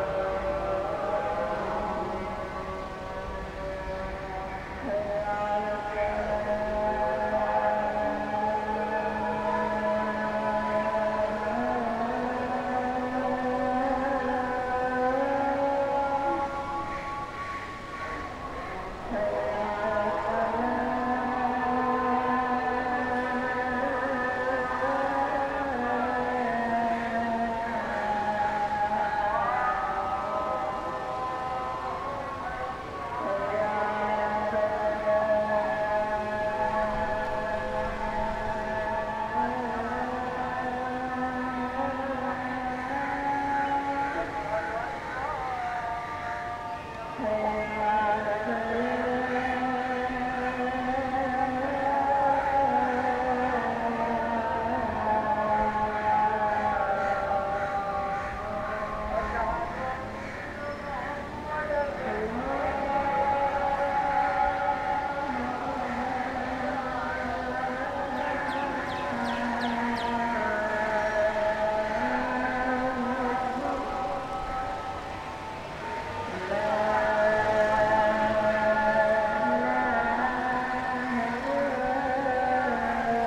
Bath Island, Karachi, Pakistan - Evening Azhan and Birds on the roof of the guest house
Evening Azhan and Birds on the roof of a guest house in Bath Island, Karachi.
Recorded using a Zoom H4N
13 October 2015